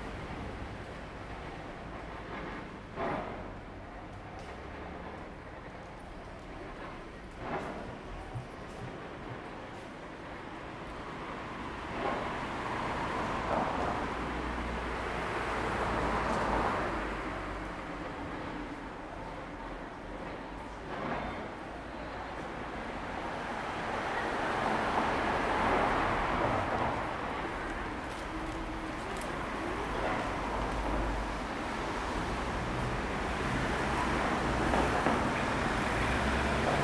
Dolny Sopot, Sopot, Polska - Under the bridge
Recorded under a train bridge in Sopot, Poland. You can hear the nearby construction and people walking by. Unfortunatelly, the only time a train rode by got a bit disturbed by a city bus. Recorded with Zoom H2N.
Sopot, Poland, 30 August 2013